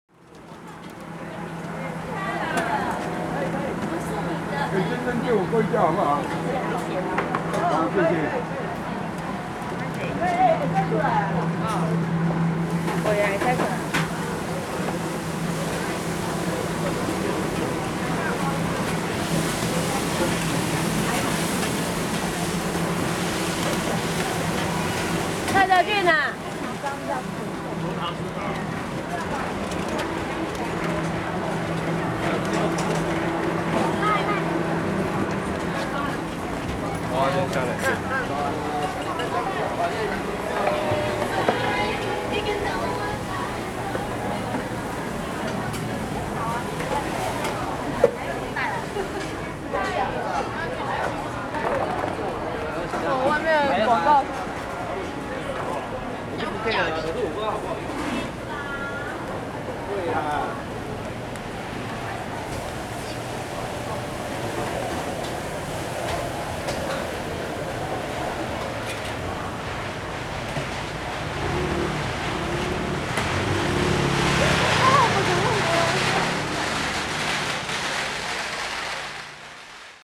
Aly., Ln., Tonghua St., Da’an Dist., Taipei City - Walking in the night market
Walking in a small alley, There are nearby temple festivals, Walking in the night market, Many vendors and snacks
Sony Hi-MD MZ-RH1 + Sony ECM-MS907